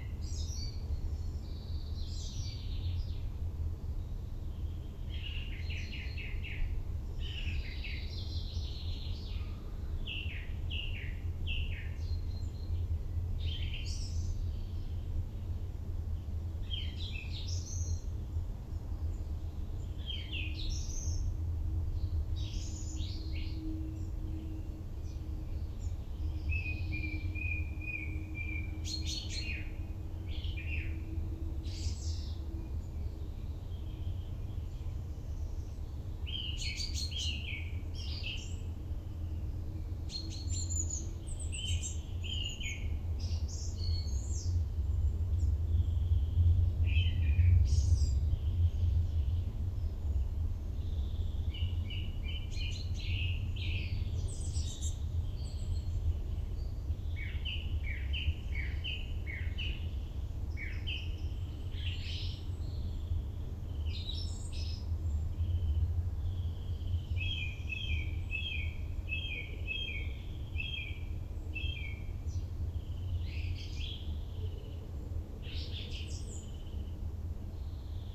Solnechnaya Ulitsa, Novoaleksandrovo, Moskovskaya oblast, Russia - Birds and planes near Klyazma river
Recorded at Health complex Klyazma during days of the iУчитель contest.